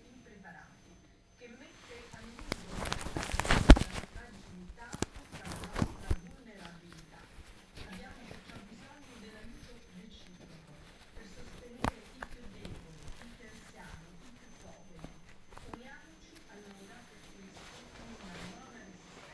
"Round Noon bells on Sunday in the time of COVID19" Soundwalk
Chapter XVIII of Ascolto il tuo cuore, città. I listen to your heart, city
Sunday, March 22th 2020. San Salvario district Turin, walking to Corso Vittorio Emanuele II and back, twelve days after emergency disposition due to the epidemic of COVID19.
Start at 11:45 p.m. end at 12:20p.m. duration of recording 35'30''
The entire path is associated with a synchronized GPS track recorded in the (kmz, kml, gpx) files downloadable here: